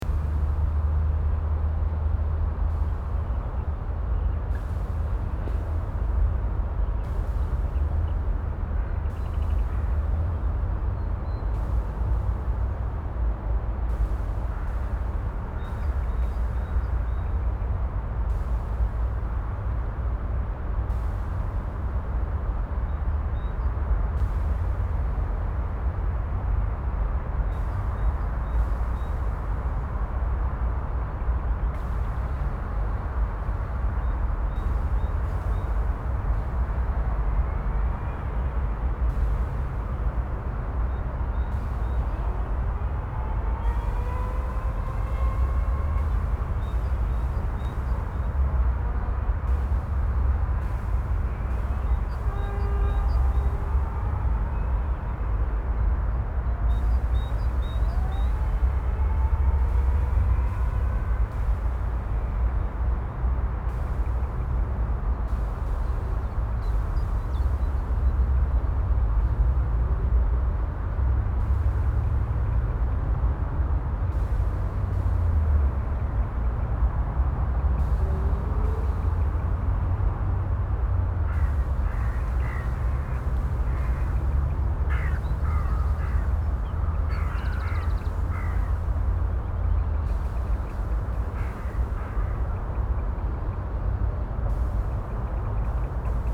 Nordviertel, Essen, Deutschland - essen, thyssen-krupp, fallow ground
In einem ehemaligen Industrieareal der Firma Thyssen-Krupp nahe einem altem Förderturm. Derr klang von Krähen in dem verlassenen Gelände und in weiterer Entfernung der Klang eines ferngesteuerten Fahrzeugs mit elektrischem Motor.
Inside a formerly industrial used areal of the company Thyssen-Krupp near an old shaft tower. The sound of crows and in the distance the high pitch signal of an remote controlled electric motor car.
Projekt - Stadtklang//: Hörorte - topographic field recordings and social ambiences
16 April 2014, 16:30